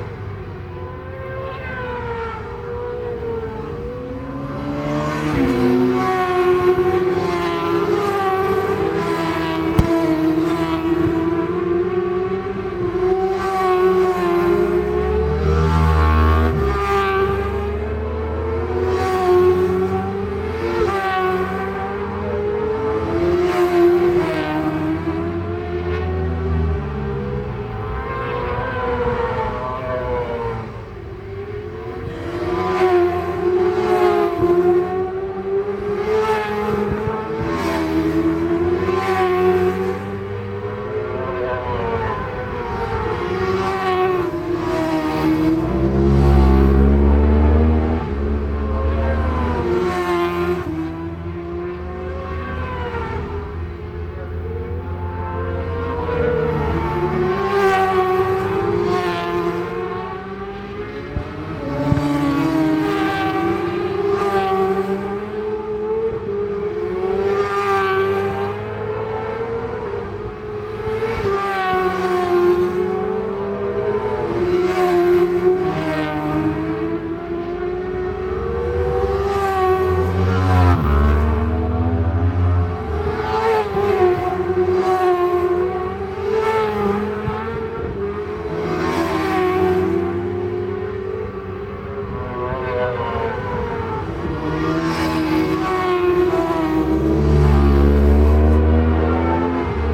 british superbikes 2002 ... supersports 600s practice ... mallory park ... one point stereo mic to minidisk ... date correct ... time not ...